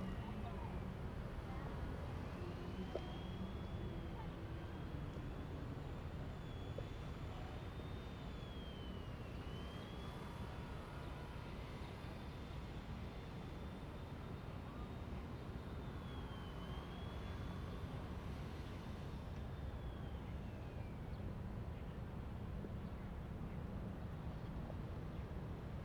Birds singing, A distant ship whistle